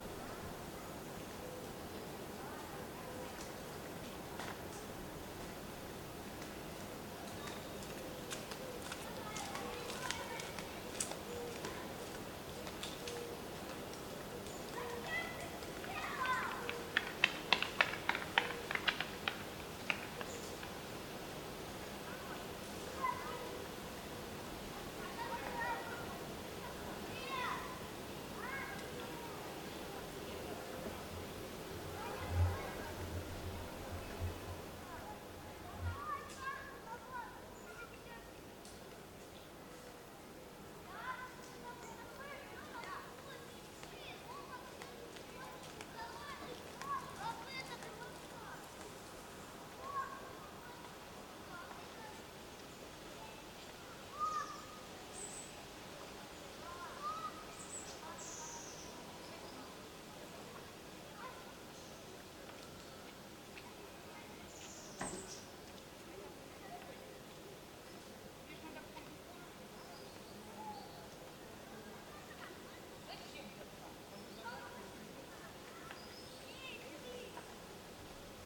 вулиця Незалежності, Костянтинівка, Донецька область, Украина - voices children on the street
Голоса детей, звуки прохожих на улице в спальном районе Константиновки Донецкой области
11 August, 07:16